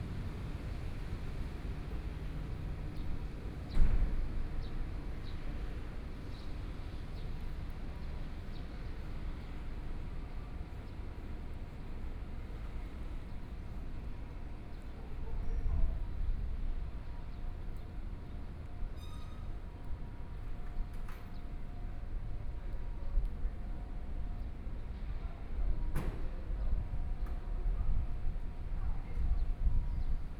宜蘭市和睦里, Yilan City - Trains traveling through
Traffic Sound, Trains traveling through, under the railway track
Sony PCM D50+ Soundman OKM II
Yilan City, Yilan County, Taiwan, 22 July 2014, 14:35